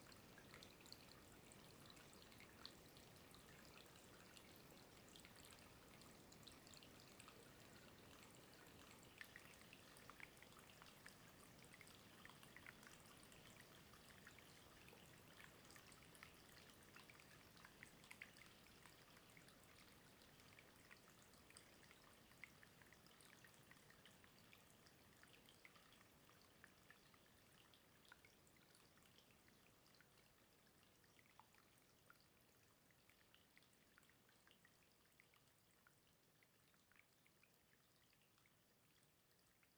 Unnamed Road, Pont-de-Montvert-Sud-Mont-Lozère, France - Sound Scape Forest runoff winter river
soundscape forest runoff small light winter river and wind in the summits
ORTF DPA 4022 + Rycotte + PSP3 AETA + edirol R4Pro